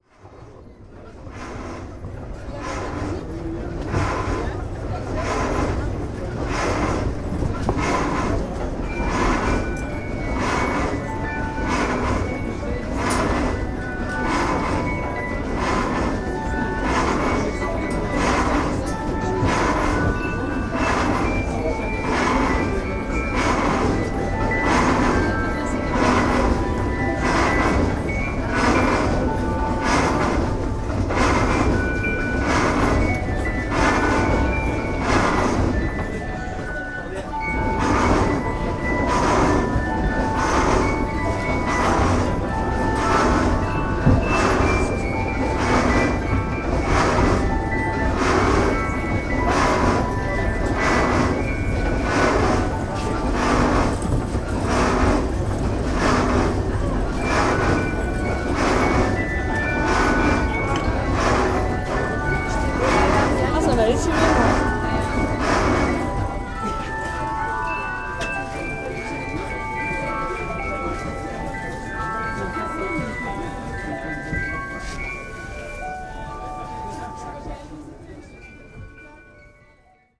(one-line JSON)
{"title": "Neudorf Ouest, Strasbourg, France - Prototype no3", "date": "2012-09-22 17:23:00", "description": "Installation Sonore - Tour Seegmuller - Ile Malraux - Strasbourg - Enregistré le 22 Septembre 2012 à 17h23 - Dans le cadre de l'Exposition collective \"La Zone\"", "latitude": "48.57", "longitude": "7.76", "altitude": "139", "timezone": "Europe/Paris"}